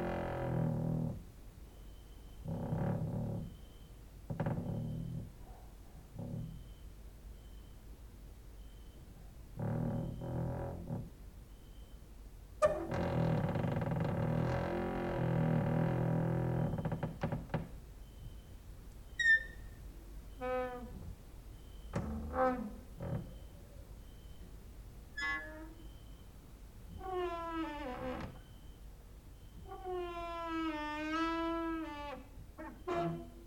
cricket outside, exercising creaking with wooden doors inside
Maribor, Slovenia, 19 August 2012